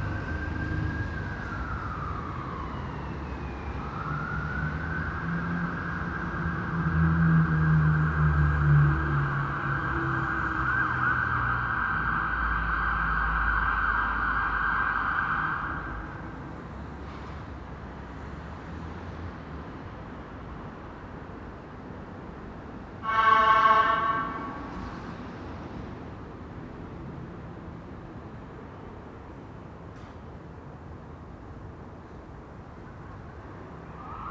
Contención Island Day 14 inner north - Walking to the sounds of Contención Island Day 14 Monday January 18th
High Street St Nicholas Avenue
People come to the machine
to pay for parking
Roofers unload ladders
and climb onto the roof
one appears above the roofline
standing on the flat roof of a loft extension
Two runners go/come
from the terraced houses behind me
A flock of racing pigeons flies overhead
England, United Kingdom